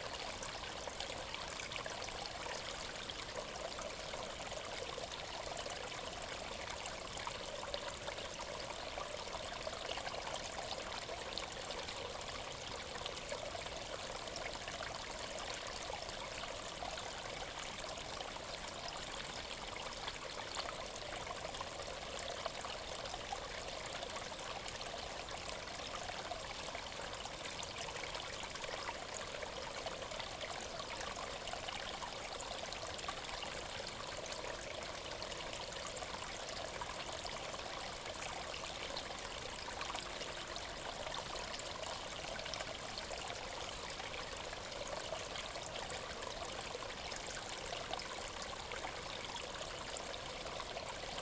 Trefaldighetsdalen, Sollefteå, Sverige - Trefaldighetsdalen
TREFALDIGHETSDALEN
Listen!
Follow the steep trail into the ravine
For each step the ravine embraces you
Breath new air!
Follow the red iron brook
Listen!
Birds, insects, fern leaves rustle
Step the footbridge, sit down on it near the well
Healing powers over centuries
Still now?
Listen!
They drank the water and washed their bodies here
Wells water´s totally clear, cool
flowing north joining iron brook water
Listen, and feel the water!
Breathe in! Breathe out!
Welcome to the well now! Sit down!
Put your hands in the water and wash yourself!
Then follow the brook down to the river